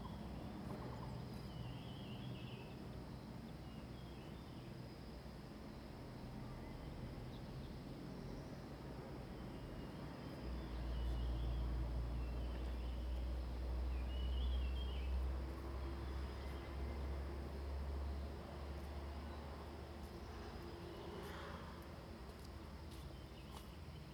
向山遊客中心, Nantou County - Bird and traffic sounds
Bird and traffic sounds
Zoom H2n MS+XY
Yuchi Township, Nantou County, Taiwan, 18 May, ~07:00